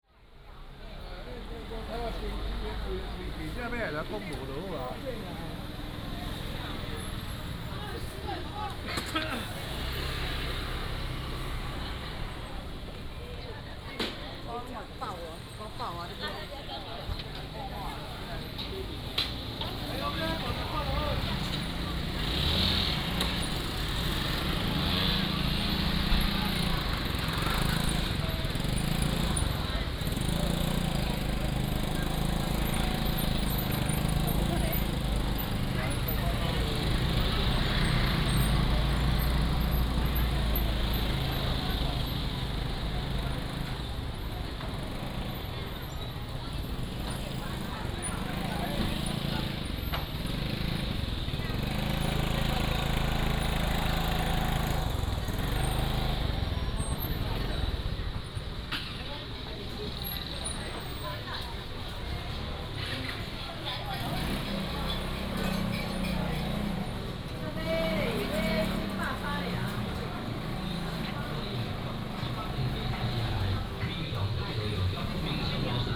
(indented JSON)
{
  "title": "Sanmin Rd., Douliu City - Old market",
  "date": "2017-01-25 10:55:00",
  "description": "Walking in the market, Sellers selling sound, Old market",
  "latitude": "23.71",
  "longitude": "120.55",
  "altitude": "60",
  "timezone": "Asia/Taipei"
}